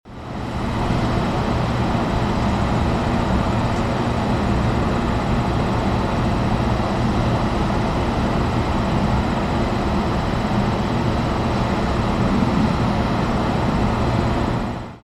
Milwaukee, WI, USA - Outside a small factory (to the south)
Outside a small factory (foundry?) in Milwaukee. The factory is south. LS-10 handheld.
23 August 2010